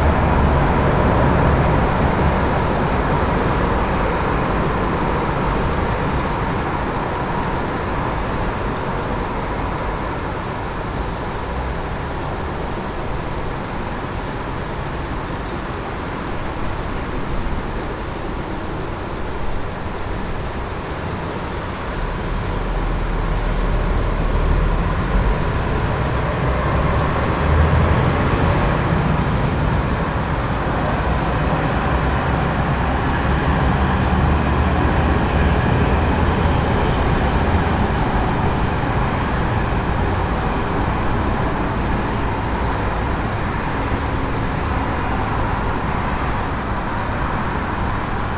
Karlova Ves, Slovenská republika - Lafranconi bridge II
Soundscape next to Bratislava´s Lafranconi bridge